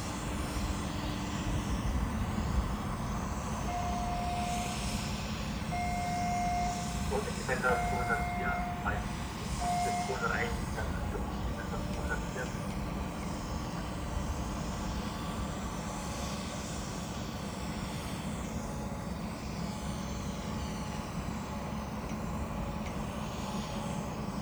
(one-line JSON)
{"title": "Spremberg, Germany - Welcow Süd mine - distant work and atmosphere", "date": "2012-08-24 15:56:00", "description": "Watching work on a giant excavating machine. It is a mystery what they are doing.", "latitude": "51.58", "longitude": "14.28", "altitude": "105", "timezone": "Europe/Berlin"}